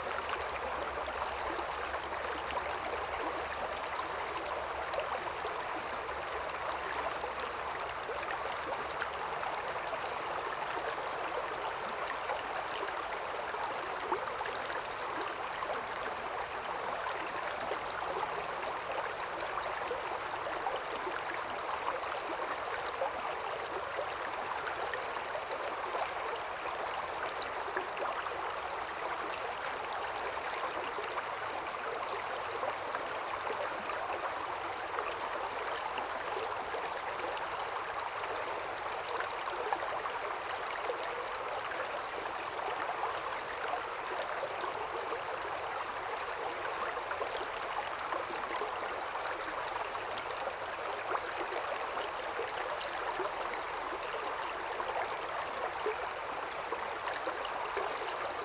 中路坑溪, 桃米里 - streams
The sound of water streams
Zoom H2n MS+XY
Puli Township, 投68鄉道73號, 5 May 2016